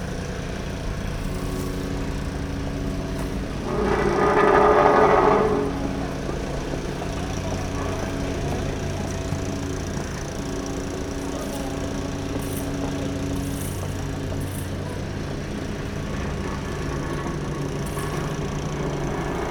LA BAIGNOIRE DES AGITÉS/La dameuse et le rateaux
Toulouse, France